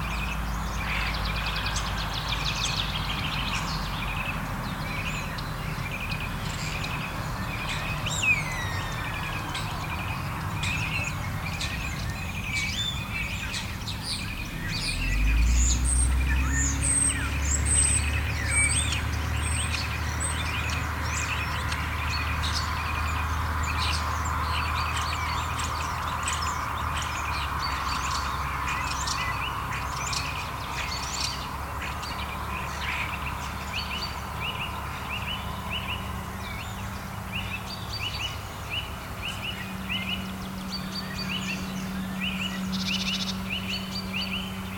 Kastre retirement centre, Estonia, birds in the park
starlings, birds, park, spring